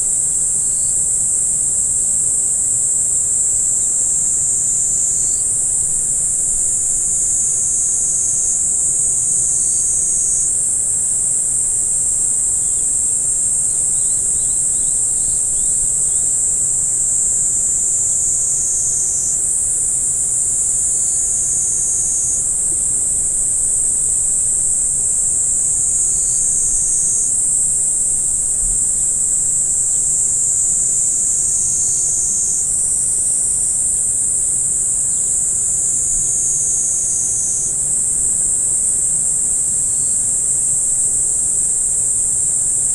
Omifuji, Yasu City, Shiga Prefecture, Japan - Cicada
A lone cicada singing in a Japanese cherry tree along a path beside a small river. The high-frequency sound of the cicada can be heard over the rumble of a waterfall and some human sounds. (WLD 2017)
17 July, 12:30